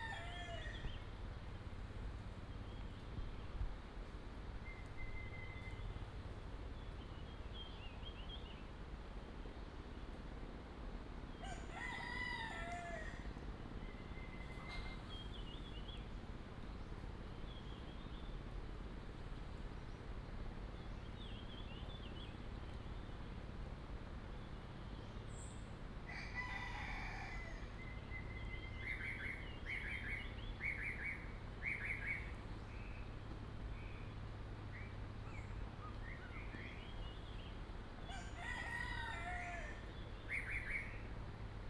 {"title": "水上巷, Puli Township - In the morning", "date": "2016-03-26 05:50:00", "description": "In the morning, Chicken sounds, Chirp", "latitude": "23.94", "longitude": "120.92", "altitude": "519", "timezone": "Asia/Taipei"}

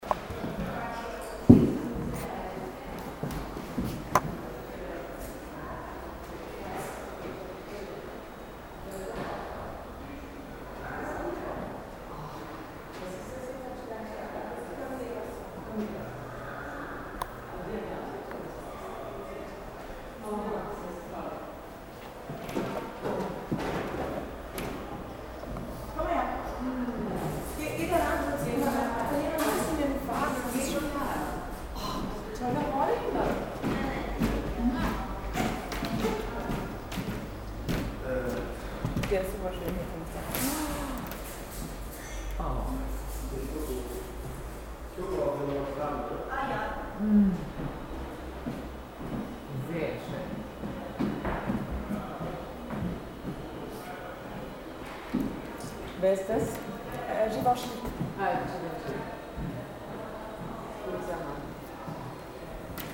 {"title": "Düsseldorf, NRW Forum, exhibition preview - düsseldorf, nrw forum, exhibition preview", "date": "2009-08-19 13:00:00", "description": "steps and talks while an exhibition preview\nsoundmap nrw: social ambiences/ listen to the people in & outdoor topographic field recordings", "latitude": "51.23", "longitude": "6.77", "altitude": "41", "timezone": "Europe/Berlin"}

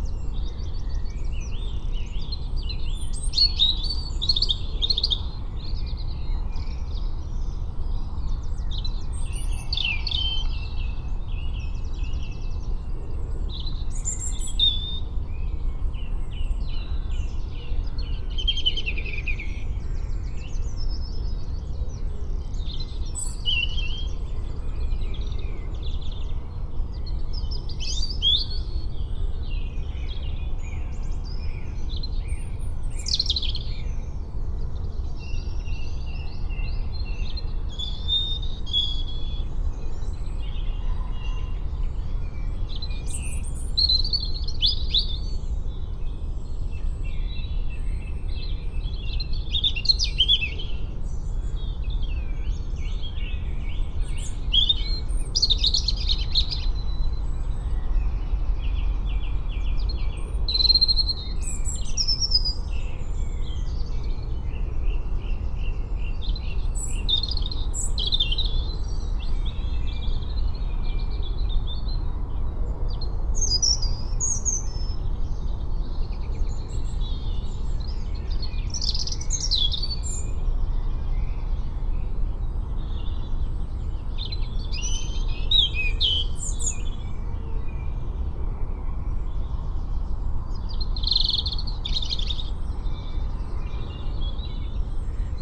{"title": "Pergola, Malvern, UK - Real Time Morning 5am 21-4-22", "date": "2022-04-21 05:05:00", "description": "A real time recording by the wood shed at the end of the garden. This is 5am and the end of a 10 hour overnight capture. Ducks fly onto the pond, owls and birds call and thankfully there is hardly any traffic on Hanley Road. The cars you can hear are 2 -5 miles away their sounds reflecting from the Severn Valley floor up the lower slopes of the Malvern Hills. A mouse runs across in front of the recorder. A rat trap snaps. There is one sound early in this piece I cannot identify. I place the omni microphones in a 180 degree configuration on top of the rucksack which holds the recorder the whole kit then sits on a large chair an arms length from the pond facing south.", "latitude": "52.08", "longitude": "-2.33", "altitude": "120", "timezone": "Europe/London"}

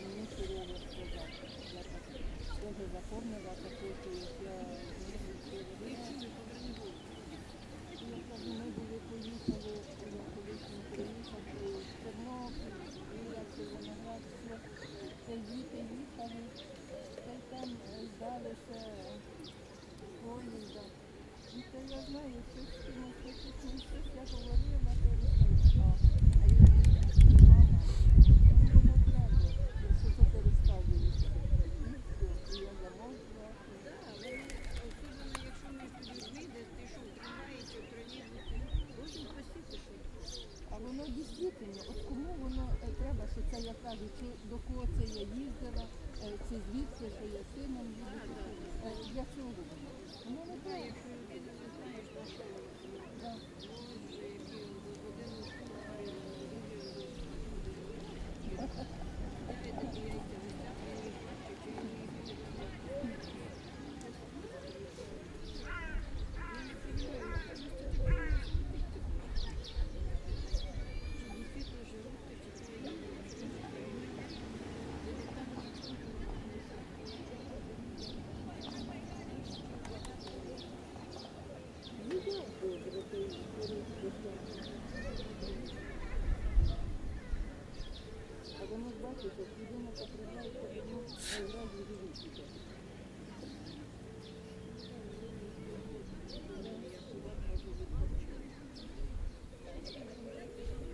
{"title": "вулиця Гонти, Вінниця, Вінницька область, Україна - Alley12,7sound3thecenterofthebeach", "date": "2020-06-27 11:17:00", "description": "Ukraine / Vinnytsia / project Alley 12,7 / sound #3 / the center of the beach", "latitude": "49.25", "longitude": "28.47", "altitude": "236", "timezone": "Europe/Kiev"}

